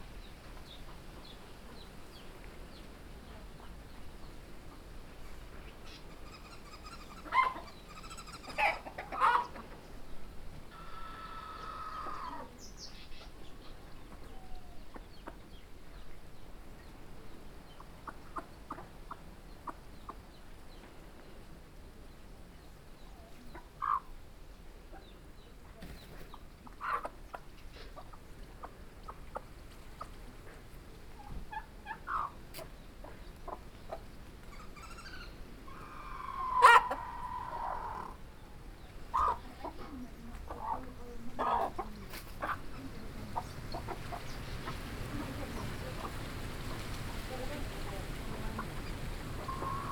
heavy mid-day breeze in the large trees... and farm life in motion...
Southern Province, Zambia, 12 July 2016